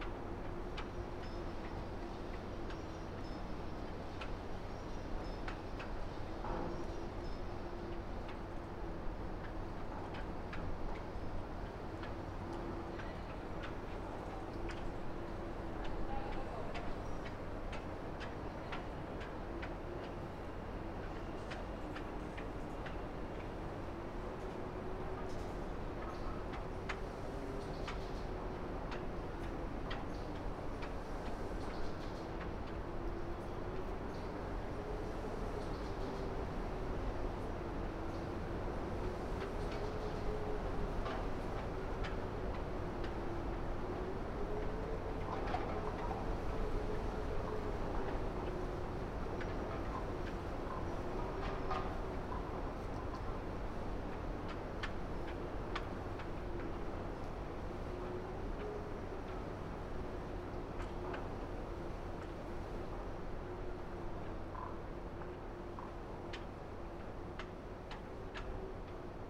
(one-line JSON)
{"title": "Alkmaar, Nederland - Wind en Bells", "date": "2014-04-25 18:01:00", "description": "Alkmaar (shotgun and ambisonics)", "latitude": "52.66", "longitude": "4.76", "altitude": "1", "timezone": "Europe/Amsterdam"}